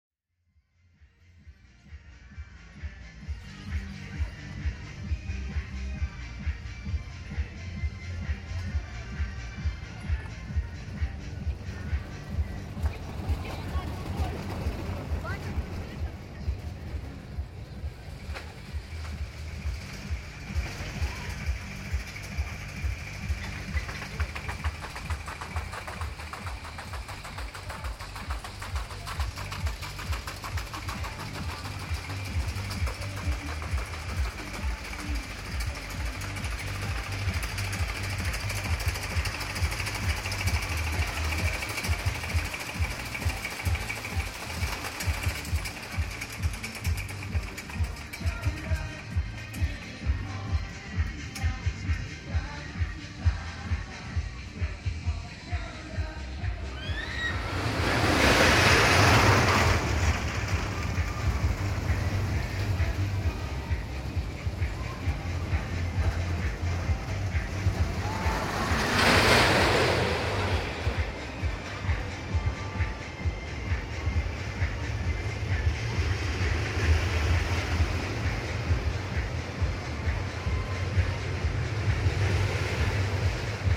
Lunapark Holesovice
Lunapark - in the former Park of Culture and Leisure in Holešovice exhibition area. Roller Caster during Sunday afternoon. Couple of fair attractions, around only few visitors. Vietnamese family resting on bench in front of the construction to observe the show.